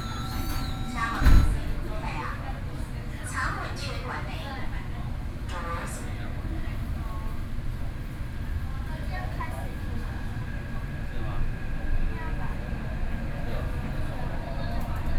from Shandao Temple Station, to Taipei Main Station, Sony PCM D50 + Soundman OKM II, Best with Headphone( SoundMap20130616- 6)
台北市 (Taipei City), 中華民國, June 2013